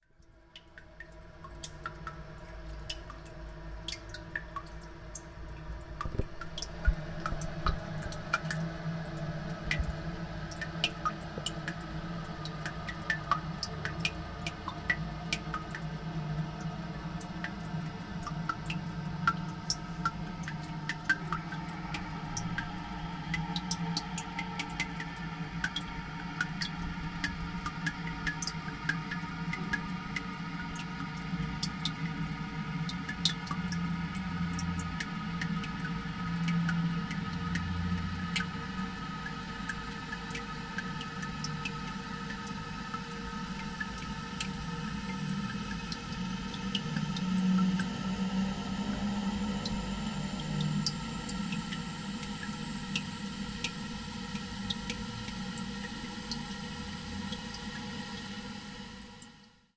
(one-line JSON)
{"title": "Brazo Oriental, Montevideo, Uruguay - casa de elsa, un canto de agua", "date": "2011-03-30 16:20:00", "description": "loveley dripping water in a cistern", "latitude": "-34.87", "longitude": "-56.17", "altitude": "30", "timezone": "America/Montevideo"}